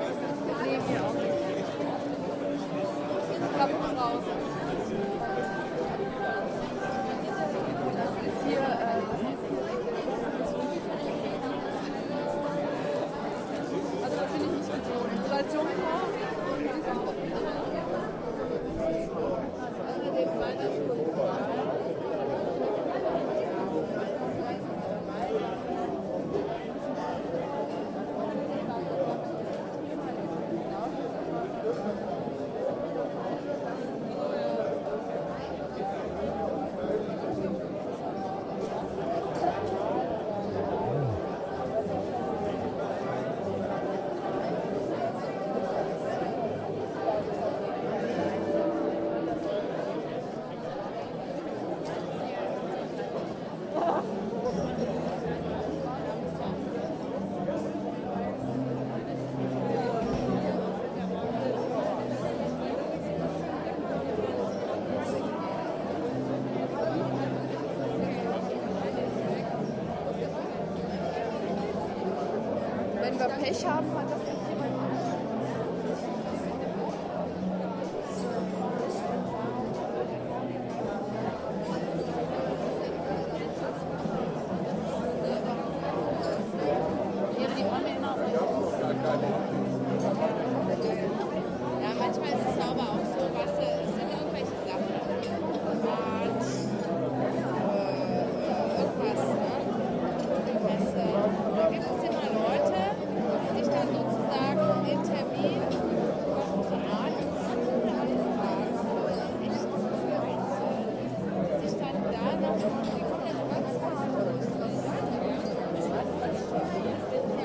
Museum Ludwig, Cologne, Opening Exhibition Gerhard Richter Abstract Paintings
Atmo at the opening of the exhibition "Abstract paintings" of the German painter Gerhard Richter at the Museum Ludwig, Colgone.